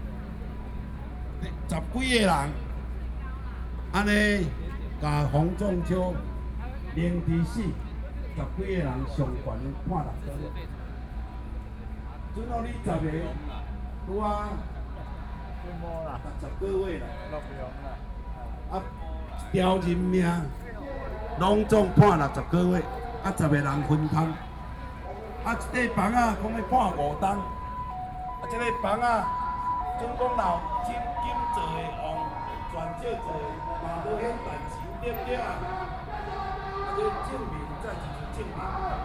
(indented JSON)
{
  "title": "Taipei, Taiwan - protest",
  "date": "2014-03-22 23:17:00",
  "description": "Walking through the site in protest, People and students occupied the Legislature",
  "latitude": "25.04",
  "longitude": "121.52",
  "altitude": "11",
  "timezone": "Asia/Taipei"
}